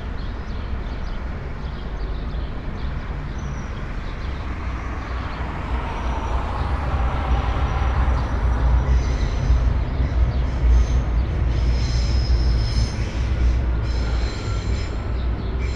dawn window, Karl Liebknecht Straße, Berlin, Germany - sunrise at 05:00
sunrise sonicscape from open window at second floor ... for all the morning angels around at the time
study of reversing time through space on the occasion of repeatable events of the alexanderplatz ambiance
Deutschland, European Union, 22 May 2013, ~05:00